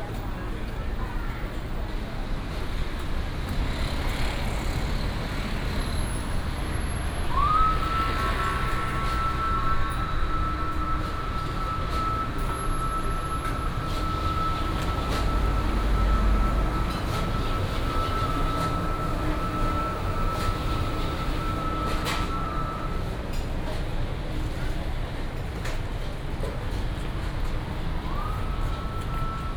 文心第一黃昏市場, Nantun Dist., Taichung City - walking in the Evening Market
walking in the Evening market, Traffic sound